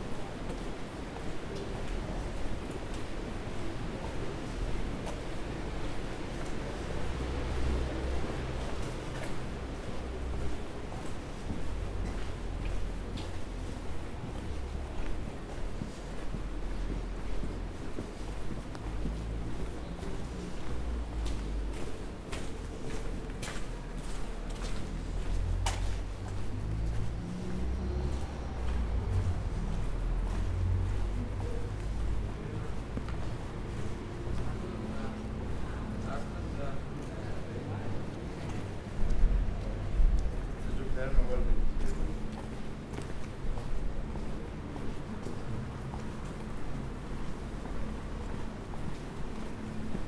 Poschiavo, caminare
Spaziergang durch Poschiavo, südliches Flair in Strömen
Poschiavo, Switzerland